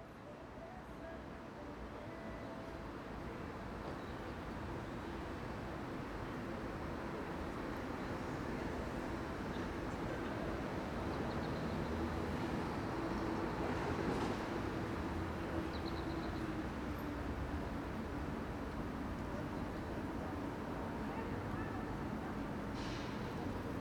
Schlosshof, Wind, Verkehr im Rücken, Menschen die Lachen, Menschen die Vorbeigehen, Urban